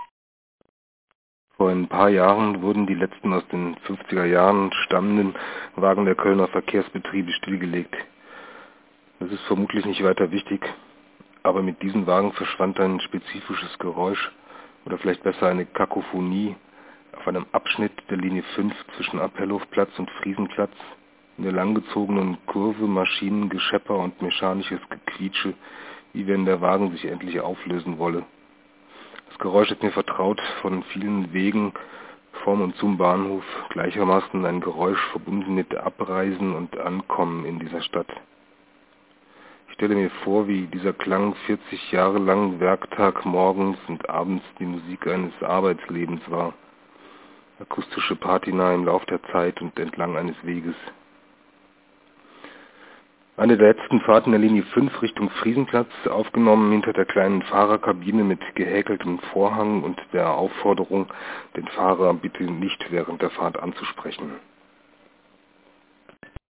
Köln, Friesenplatz - U5 Friesenplatz Koeln 06.12.2006 22:17:41
phone call to radio aporee maps, about the sounds of the old tram waggons
Cologne, Germany